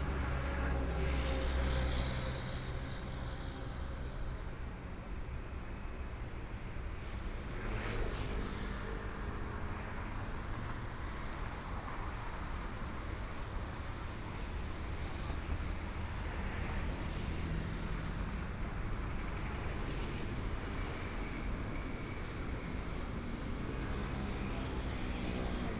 Minzu E. Rd., Taipei City - walking on the Road
walking on the Road, Walking across the different streets, Environmental sounds, Traffic Sound, Motorcycle Sound, Pedestrian, Clammy cloudy, Binaural recordings, Zoom H4n+ Soundman OKM II